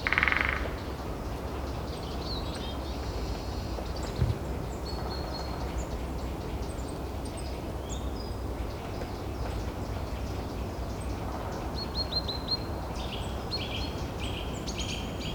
Sucholewski forest - forest ambience, rattling bird
morning winter ambience in the sucholewski forest. lots of bird activity. one particular bird making its rattling call in more or less regular intervals. gentle hight pass filter applied to remove overwhelming boomy noise of the heavy traffic around the forest (sony d50)
Poznań, Poland, 8 February 2018